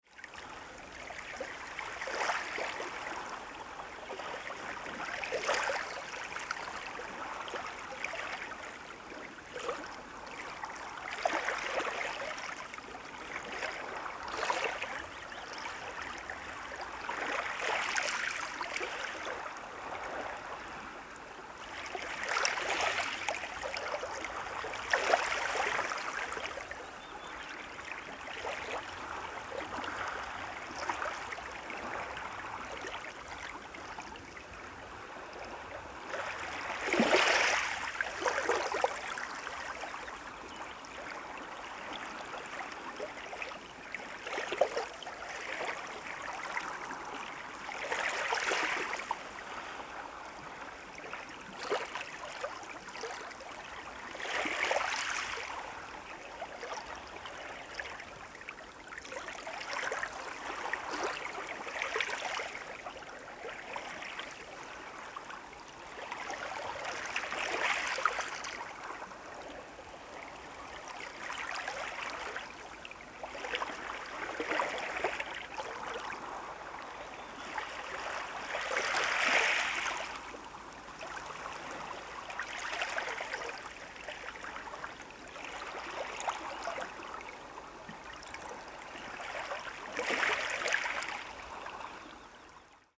The lapping of the waves, White Sea, Russia - The lapping of the waves
The lapping of the waves.
Плеск морских волн.